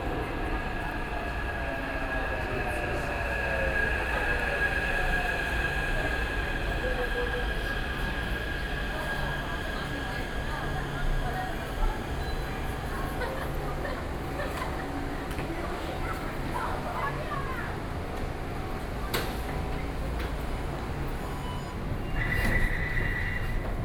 Taipei, Taiwan - Walking into the MRT
Walking into the MRT, Sony PCM D50 + Soundman OKM II
Zhongzheng District, Taipei City, Taiwan